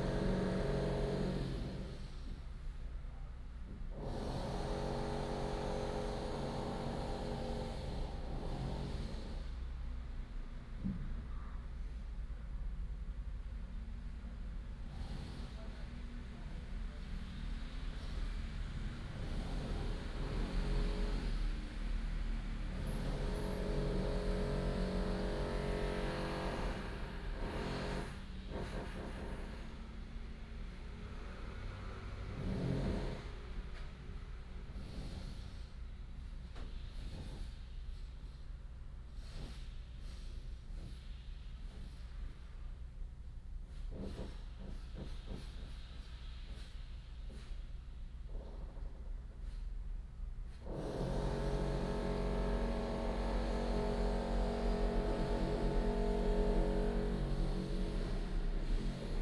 大仁街20號, 淡水區, 新北市 - Construction noise
Construction noise, Traffic Sound
New Taipei City, Taiwan, 5 January